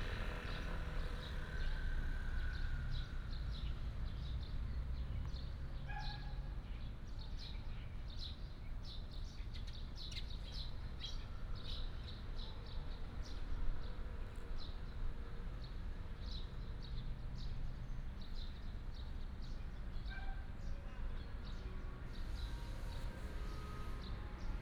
Sec., Daren Rd., Dacun Township - in the Park
in the Park, sound of the birds, Traffic sound, Children's play area
Changhua County, Taiwan, 6 April 2017